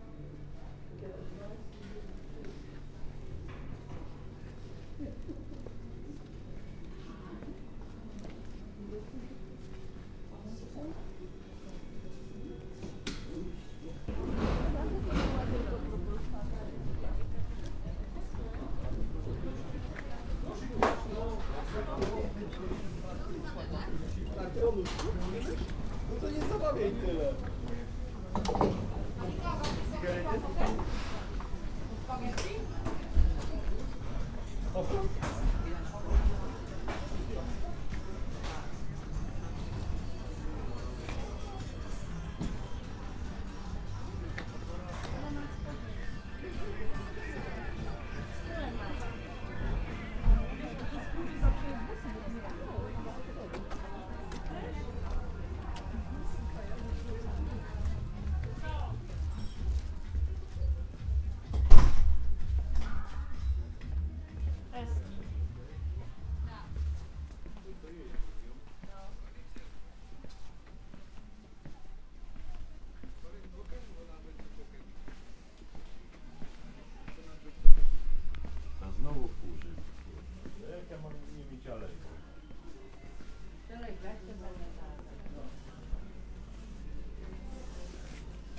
cedynia, osinów dolny: market hall - the city, the country & me: soundwalk through market hall
binaural soundwalk through market hall with numerous shops, cafes etc.
the city, the country & me: may 10, 2014
Poland, 10 May 2014, 16:00